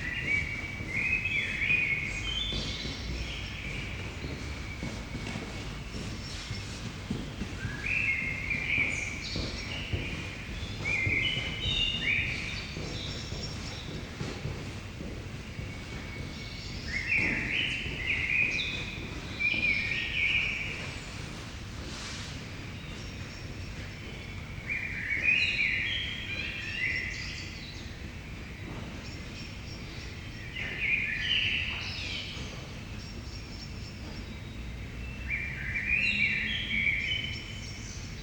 Aronia, Italy. Early morning - blackbird, lonely walker.
Italy, street, blackbird, footsteps, Arona